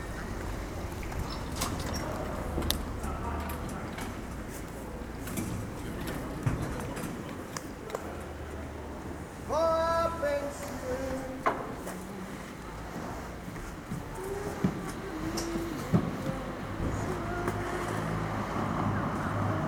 Asola MN, Italy - closing of the open air market square
the sellers are closing the market, packing up their moving booths and putting goods into their vans before leaving. some are sellings last vegetables, some others talking each other before going home
24 October 2012, 14:30